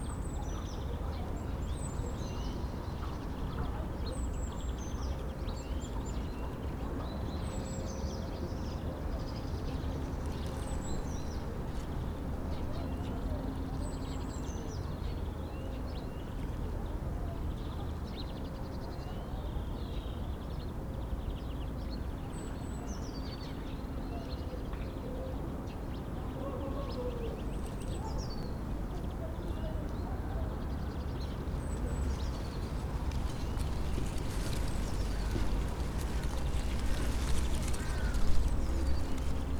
berlin: rütlistraße - the city, the country & me: bush, fence and crows
dry leaves of a bush in the wind, creaking fence, crows
the city, the country & me: march 18, 2013
Berlin, Germany, 18 March, ~14:00